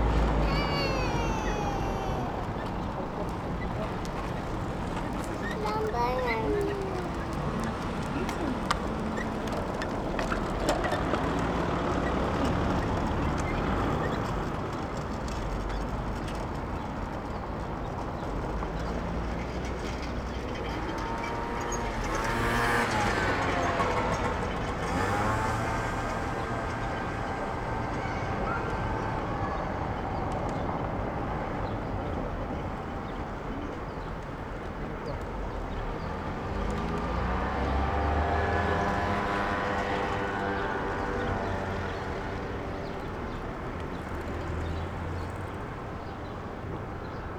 Berlin: Vermessungspunkt Maybachufer / Bürknerstraße - Klangvermessung Kreuzkölln ::: 26.05.2011 ::: 18:59
Berlin, Germany, 26 May 2011